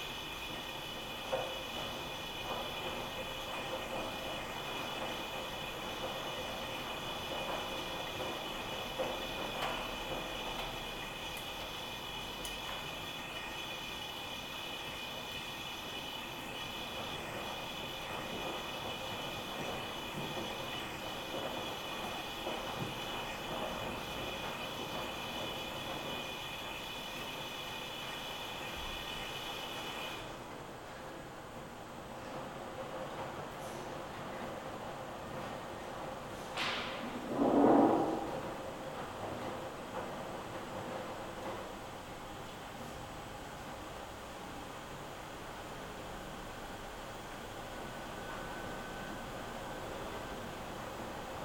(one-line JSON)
{"title": "berlin, ohlauer str., waschsalon - 1st floor, washing machines", "date": "2018-02-17 18:05:00", "description": "Berlin, Ohlauer Str., laundry, 1st floor, ambience\n(Sony PCM D50)", "latitude": "52.49", "longitude": "13.43", "altitude": "40", "timezone": "Europe/Berlin"}